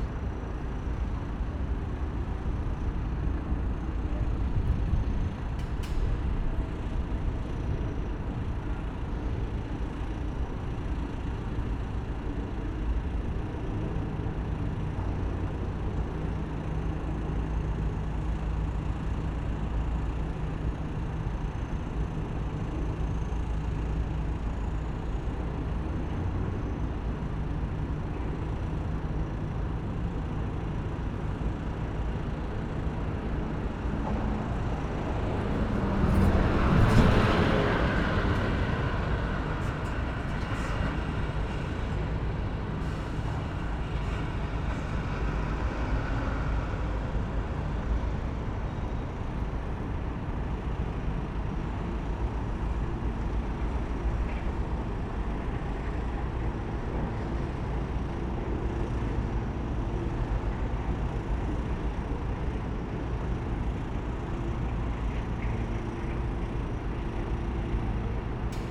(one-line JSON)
{"title": "berlin: grenzallee - A100 - bauabschnitt 16 / federal motorway 100 - construction section 16: crane lifts steal beam", "date": "2016-04-16 17:16:00", "description": "big crane lifts big steal beam\napril 16, 2016", "latitude": "52.47", "longitude": "13.46", "altitude": "37", "timezone": "Europe/Berlin"}